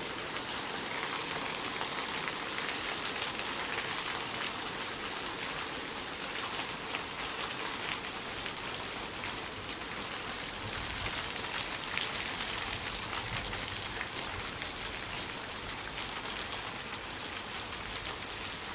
rain running down house wall like a waterfall.
recorded june 22nd, 2008, around 10 p. m.
project: "hasenbrot - a private sound diary"